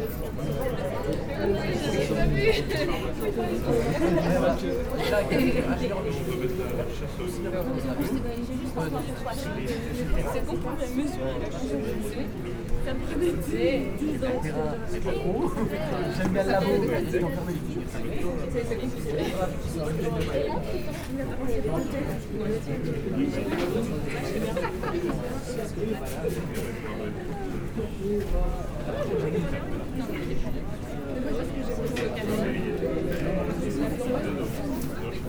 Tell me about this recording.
Students waiting in a very long line, in a sandwich shop.